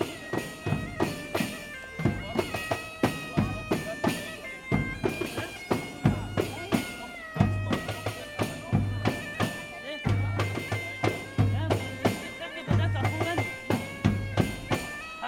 berlin sanderstr. - wedding
wedding march bagpipe version
Berlin, Germany, 15 January 2011